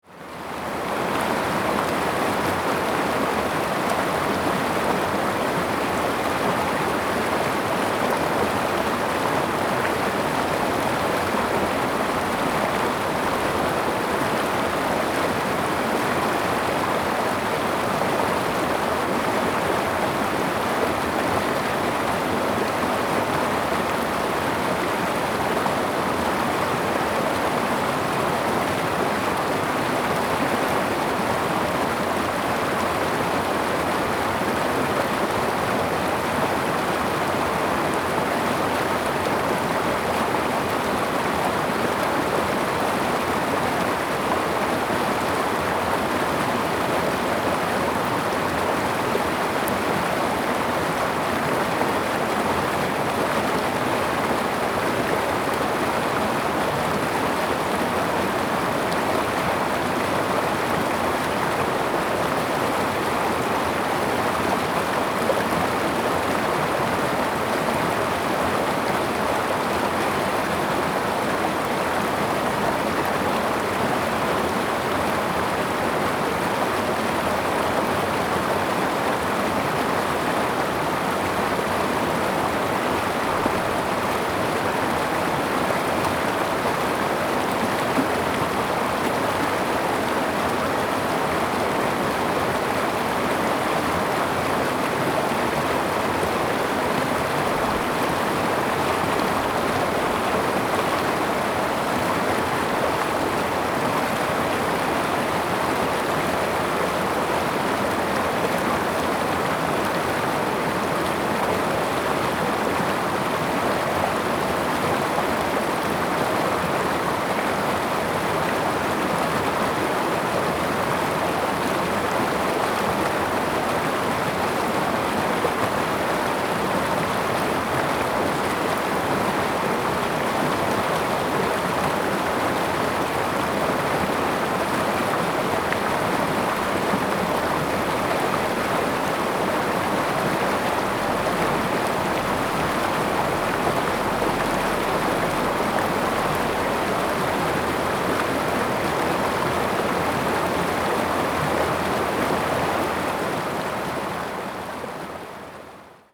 成功里, Puli Township, Nantou County - Brook
Brook, In the river, stream
Zoom H2n MS+XY